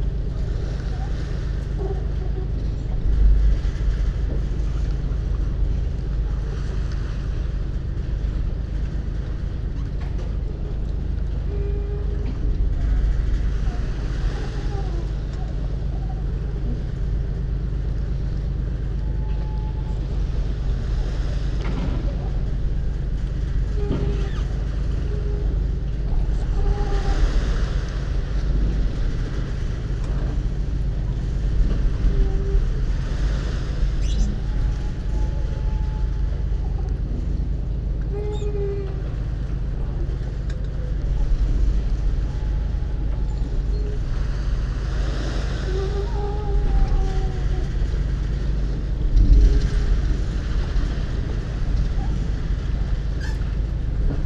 La Palma, Spanien - Harbor sing sang
The harbor in Santa Cruz de La Palma performs a kind of Sing Sang.
A mix created by the wind, the waves pushing into the sheltered harbor basin, the pontoons that are always slightly in motion, and the running engines of the just loading large ferries.
Santa Cruz de Tenerife, Canarias, España, 15 April 2022